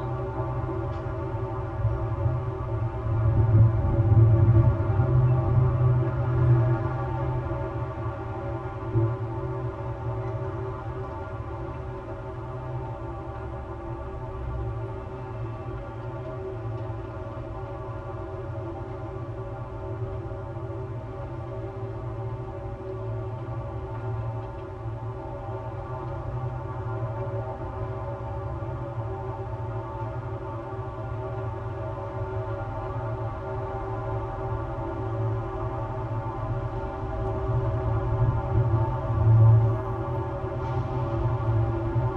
Maribor, Slovenia - one square meter: handrail support poles, first pair
a series of poles along the riverside that once supported handrails for the now-overgrown staircase down to the waters edge. the handrails are gone, leaving the poles open to resonate with the surrounding noise. all recordings on this spot were made within a few square meters' radius.